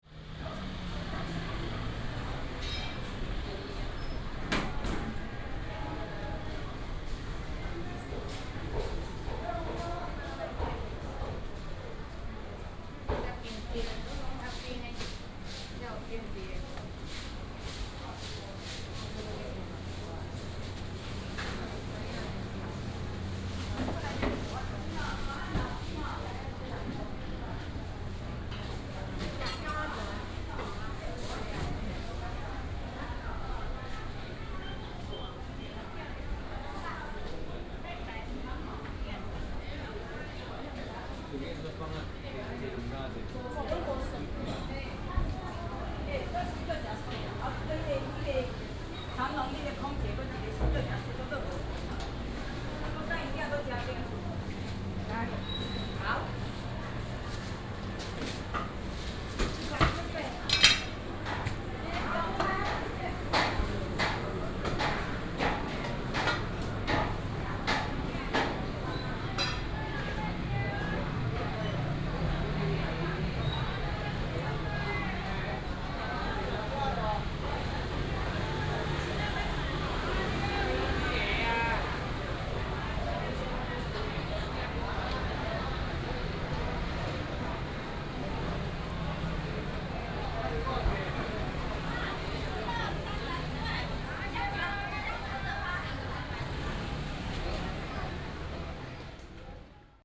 三重中央市場, New Taipei City - Walking in the market
Walking in the traditional market
New Taipei City, Taiwan, 23 April